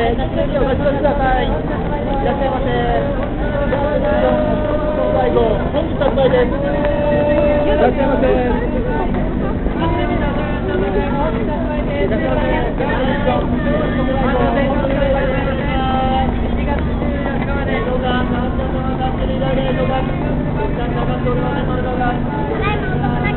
shinjuku/advertising at 6.30 p.m /17.12.07